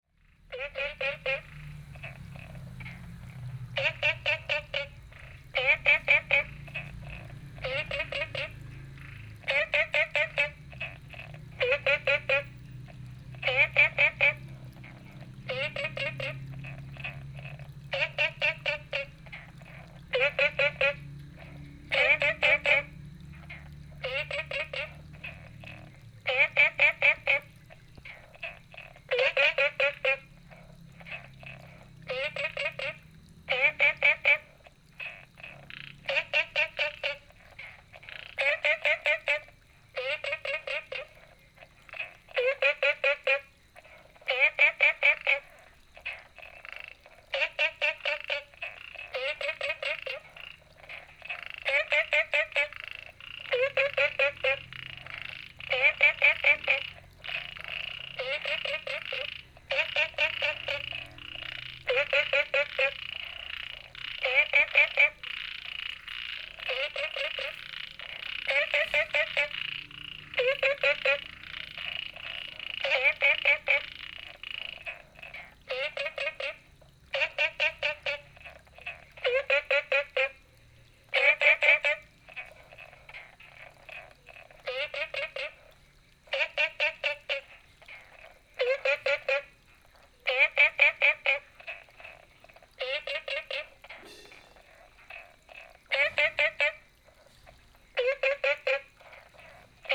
綠屋民宿, Puli Township - ecological pool
Small ecological pool, All kinds of frogs chirping
Puli Township, Nantou County, Taiwan, 10 June 2015